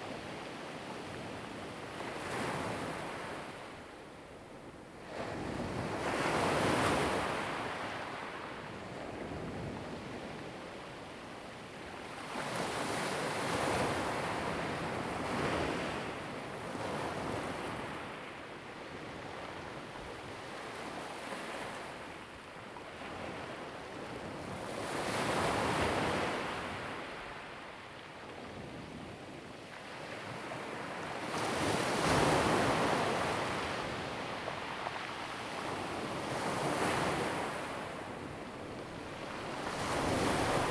Koto island, Taitung County - At the beach
At the beach, Waves
Zoom H2n MS+XY
Taitung County, Taiwan, 30 October 2014, 08:45